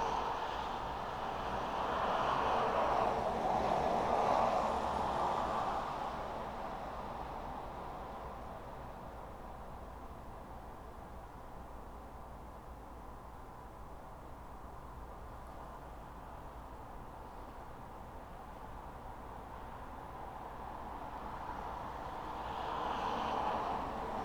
Goss - Grove, Boulder, CO, USA - Bedroom Window